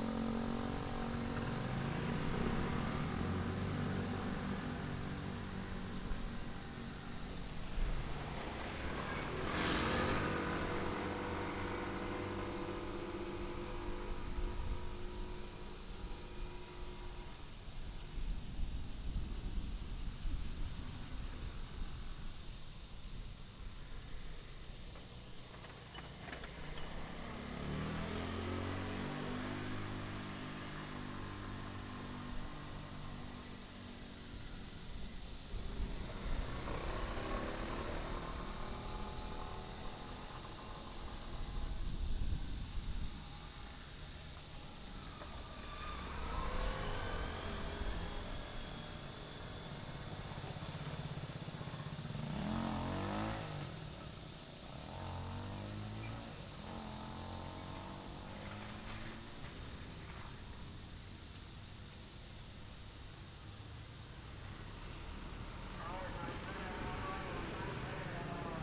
新光路, Pingzhen Dist. - in the railroad crossing
in the railroad crossing, Cicada cry, Traffic sound, The train runs through
Zoom H6 XY
Pingzhen District, Taoyuan City, Taiwan, 28 July, 09:05